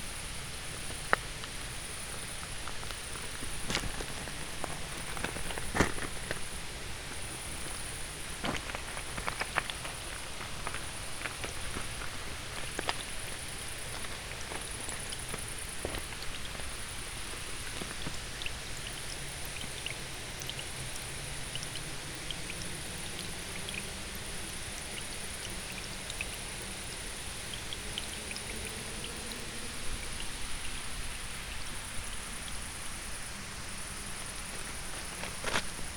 walk down the seasonal dry spring bed
Poljčane, Slovenia, July 2014